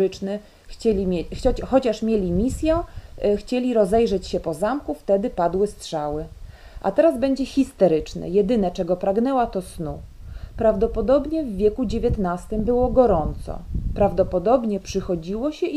Каптаруны, Беларусь - Justyna Czechowska is reading on the panel discussion about translation
International open air forum Literature Intermarium
Jaciūnai, Lithuania